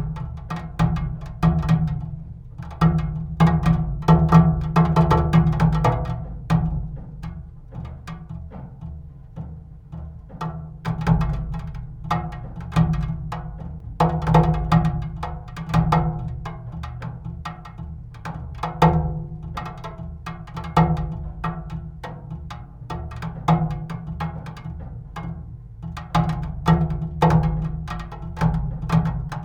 Utena 28140, Lithuania, rain pipe
drizzle. rain pipe on the closed school. magnetic contact microphones
Utenos rajono savivaldybė, Utenos apskritis, Lietuva, 11 September 2022, ~17:00